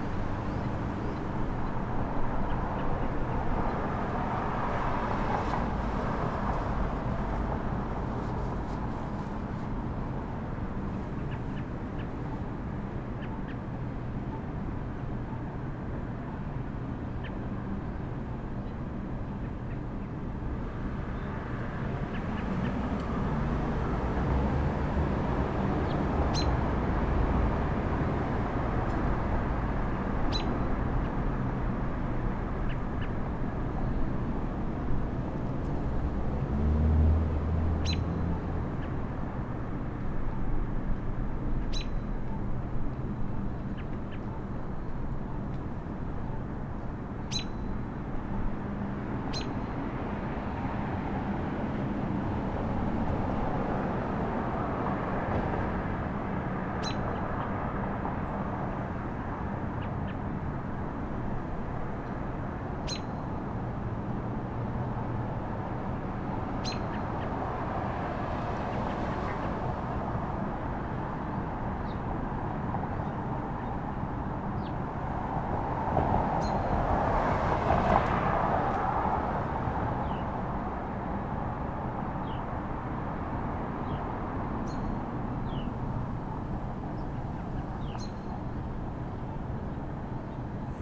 Harbord St At St George St, Toronto, ON, Canada - Quiet Intersection; No Students
This is normally a very busy intersection, with University of Toronto students walking past constantly, but today there was no one out, just birds, a few cars, and a walk audio signal that never stopped. (Recorded on Zoom H5.)